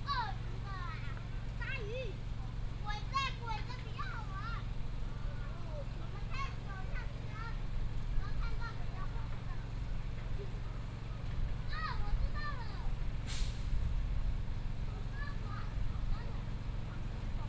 大鳥國小, Dawu Township - Kids play area
Kids play area, Pumps, School children's voice, Bird cry
Dawu Township, 大鳥聯外道路, 23 March 2018, ~15:00